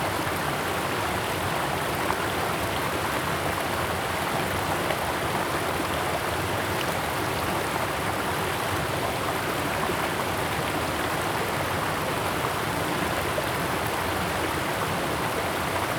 茅埔坑溪, 茅埔坑溼地公園 Puli Township - Stream sound
Stream sound
Zoom H2n MS+XY
Puli Township, 桃米巷11-3號